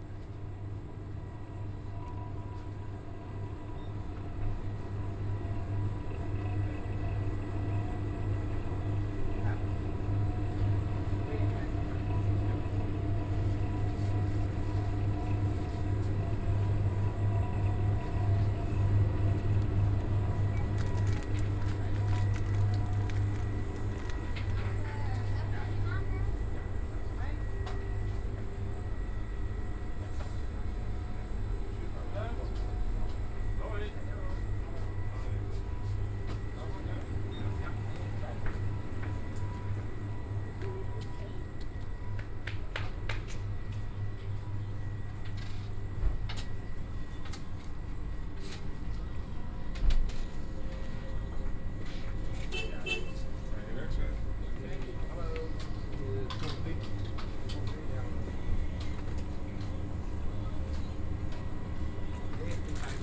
shop van tankstation
koelsysteem, broodje kaas kopen
cooling system in the shop of the tankstation bying a roll with cheese